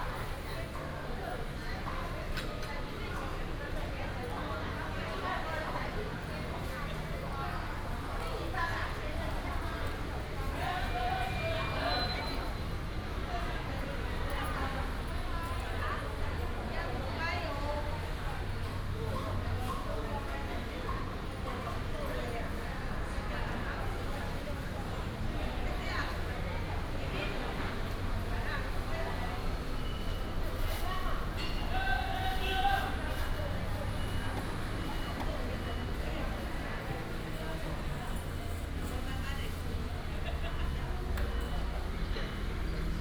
苗北公有零售市場, 苗栗市 - Outside the market
Outside the market, Street vendors, Traffic sound
Miaoli City, Miaoli County, Taiwan, 18 January 2017